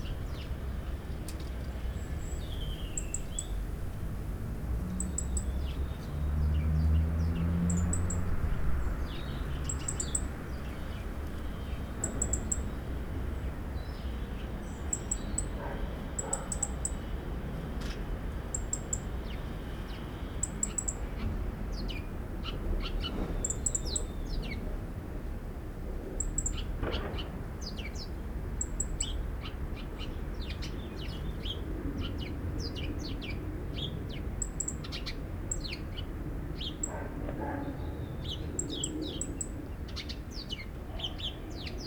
Multi-layered noise: birds, dogs, tramway, heavy (distant) construction work, neighbour machine, passing plane
Plusieurs couches de bruit: oiseaux, chiens, tramway, bruit de travaux (puissant mais lointain), bruit de machine du voisinage, passage d’un avion